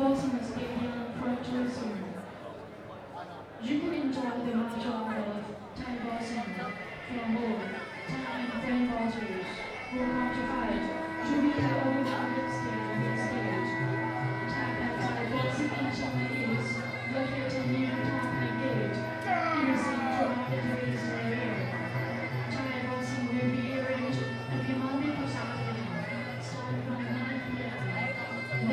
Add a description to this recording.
Muay Thai fights in Chieng Mai Boxing Stadium part one - first fight